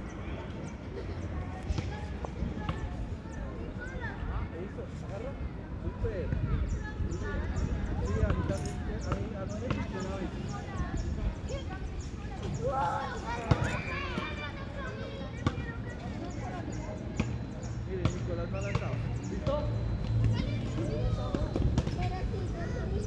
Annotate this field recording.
teenagers and children play soccer while vehicles drive through the area